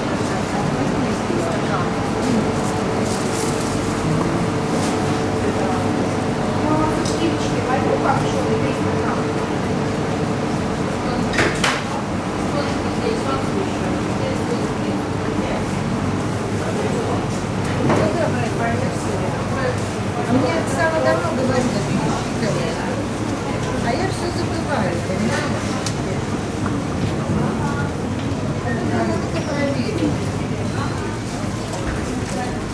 Baltijaam market refrigerators, Tallinn
refrigerator noises in the baltijaam market
Tallinn, Estonia, 19 April 2011